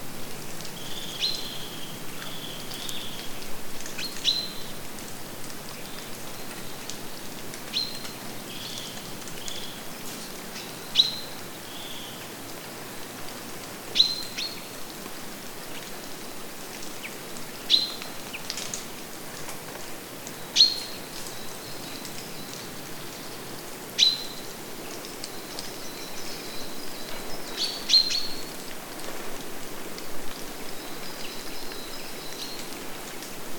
Reinhardswald, Rundweg Nr 6, Schneiders Baum, Zapfen knacken in der Sonne
Reinhardswald, Rundweg Nr 6 von Schneiders Baum, Zapfen knacken in der Sonne, fir cones crackling in the sun
gemeindefreies Gebiet, Germany, 2 October 2011